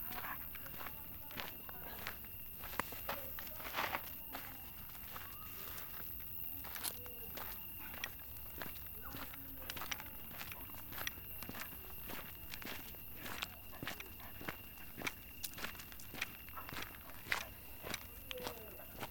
R. do Monte da Poça, Portugal - sound walking the dog
Braga, Portugal, 10 August, ~22:00